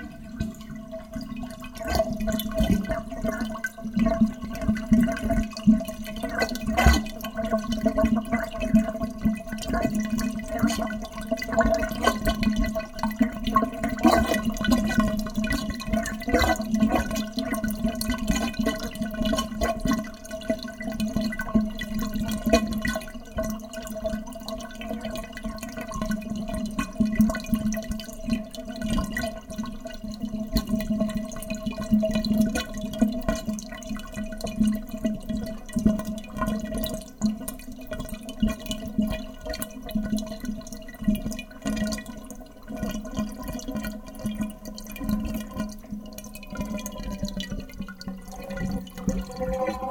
Montreal: Apt., Terrasse St. Denis - Apt. 407 334, Terrasse St. Denis
equipment used: Edirol R-09
Water going down the plughole in the bath
QC, Canada, 12 June 2008